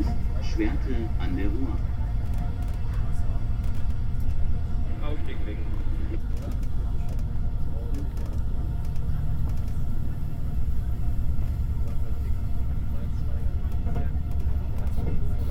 regionalzug, nächster halt schwerte a.d. ruhr
schienengesänge, fahrzeuggeräusche, zugansage
soundmap nrw:
social ambiences/ listen to the people - in & outdoor nearfield recordings